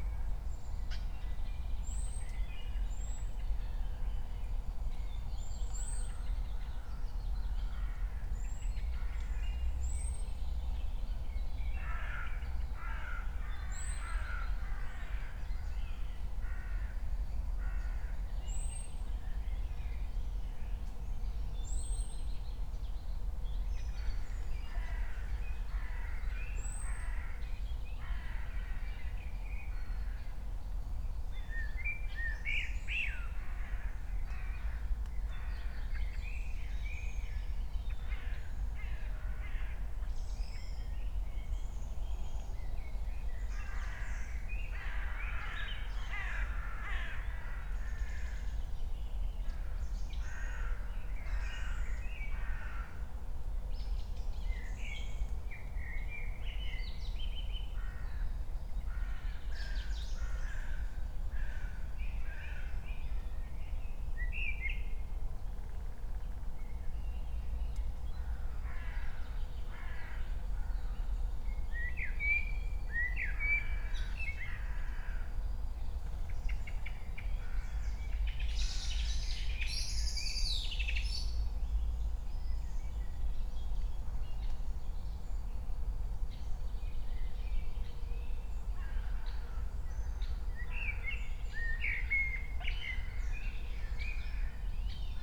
9:00 dog, frog, crows and other birds